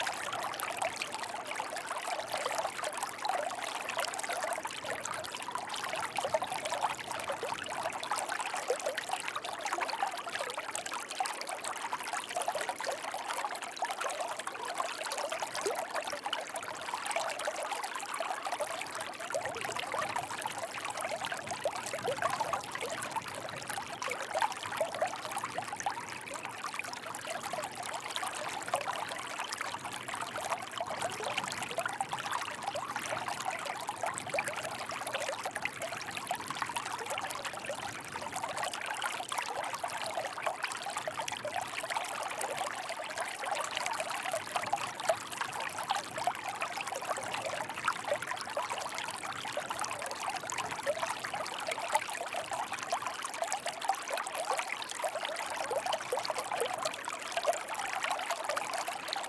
La Tranche-sur-Mer, France - Channel on the beach
During low tide, crossing a "baïne" river. The "baïne" (said in french like baheen) is a geographical phenomenon unique to the Aquitaine coast of France. It takes the form of a small pool of water, parallel to the beach, directly connected to the sea. When the tide is receding, they cause a very strong current out to sea, the ground is quicksand. It's strongly dangerous. I crossed it because of residents said me I could because of the hour (very low tide), but I wouldn't do it alone.
23 May 2018